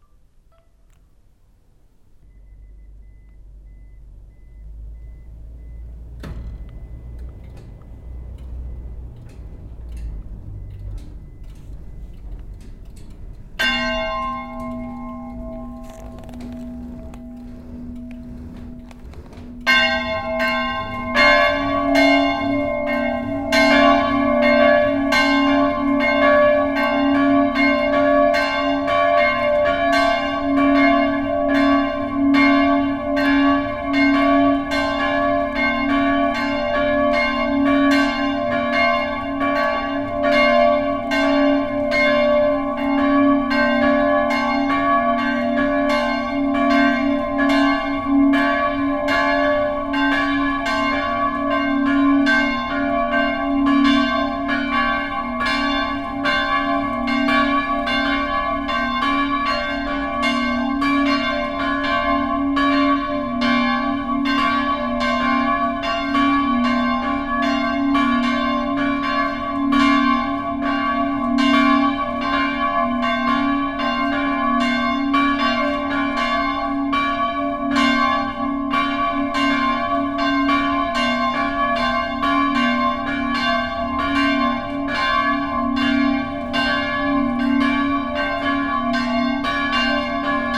vianden, trinitarier church, bells
At the bellroof - a second recording of the same church bells - this time with OKM headphone microphones.
Vianden, Trinitarier-Kirche, Glocken
Im Glockendach der Kirche. Das Klicken des elektrischen Schalters, dann die Glocken der Trinitarier-Kirche.
Vianden, église de la Sainte-Trinité, cloches
A l’intérieur du clocher de l’église. Le cliquetis du panneau de contrôle électrique puis les cloches de l’église de la Sainte-Trin
Project - Klangraum Our - topographic field recordings, sound objects and social ambiences